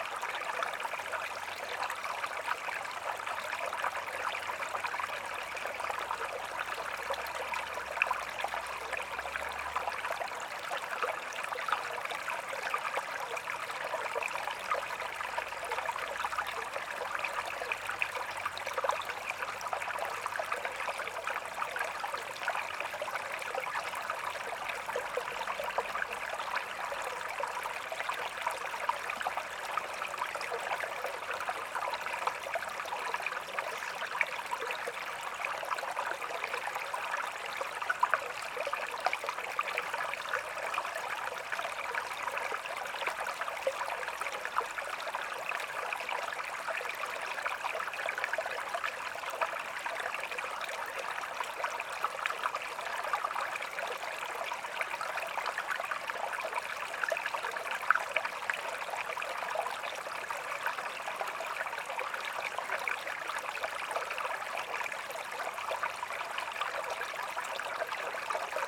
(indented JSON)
{
  "title": "Campo de Geres, Portugal - Stream - Stream - Campo Geres",
  "date": "2018-09-03 11:15:00",
  "description": "Small stream running, recorded with a SD mixpre6 and 2 Primo 172 omni mics in AB stereo configuration.",
  "latitude": "41.75",
  "longitude": "-8.20",
  "altitude": "617",
  "timezone": "GMT+1"
}